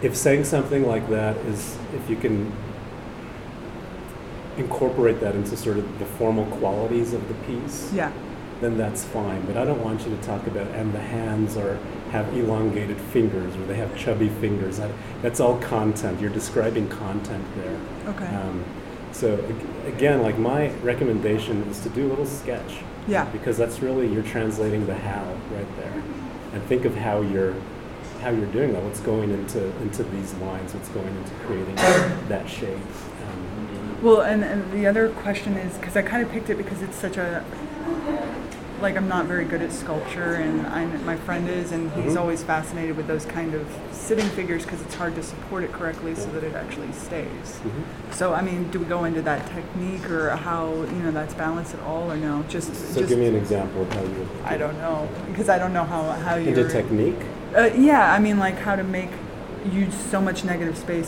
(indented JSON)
{
  "title": "W 14th Ave Pkwy, Denver, CO - Mesoamerican Section Of DAM",
  "date": "2013-02-02 11:15:00",
  "description": "This is a recording of a teacher speaking to a student regarding a project in the Denver Art Museum Mesoamerican / Precolumbian section.",
  "latitude": "39.74",
  "longitude": "-104.99",
  "altitude": "1613",
  "timezone": "America/Denver"
}